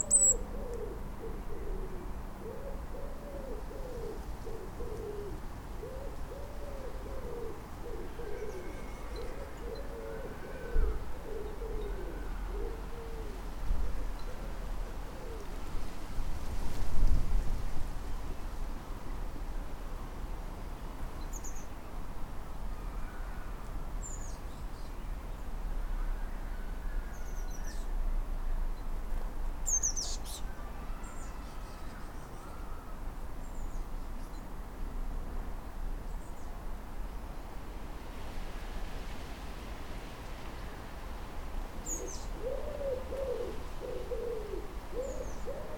This recording was made in our apple tree. After a long period of ill-health, I am feeling much better, and am able to do lots more in the garden. This has led to enthusiastic planning and creativity out there, including the acquisition of three lovely chickens who now live where the ducks (may they rest in peace) formerly resided. The chickens are beauties, and the abundance of food for them has attracted many wild avian buddies to the garden too, for whom I have been creating little seed bars out of suet, nuts, mealworms and other treats. Tits - in particular little Blue-tits - and the Robins and Wrens all totally love the suet treats and so yesterday I strapped my EDIROL R09 into the branches of the tree to record their little flittings and chirps. I'm sure one of the sounds is of a Blue-tit but if any of you know differently, please help me to better understand the tiny comrades who share our garden with us.
Reading, UK, 11 June 2019